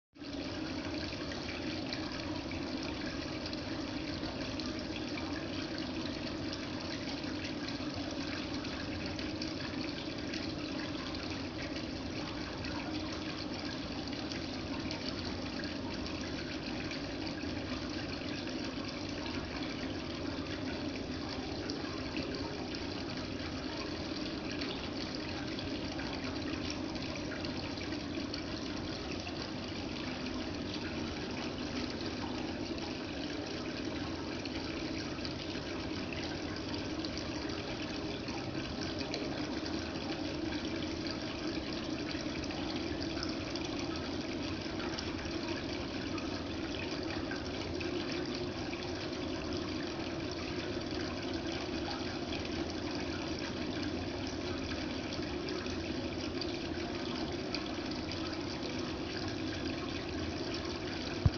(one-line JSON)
{"title": "R. Cerca, Almada, Portugal - Fonte", "date": "2018-04-04 15:20:00", "description": "Água a cair da fonte da Casa da Cerca", "latitude": "38.68", "longitude": "-9.16", "altitude": "48", "timezone": "Europe/Lisbon"}